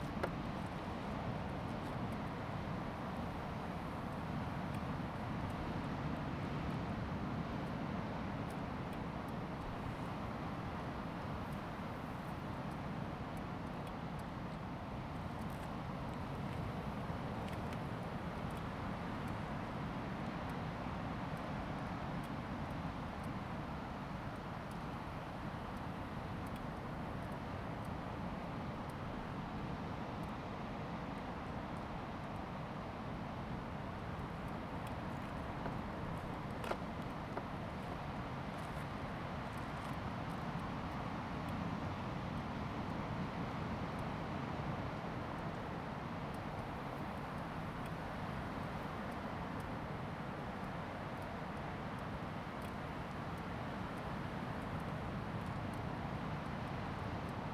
歐厝沙灘, Kinmen County（ - At the beach
At the beach, Sound of the waves
Zoom H2n MS+XY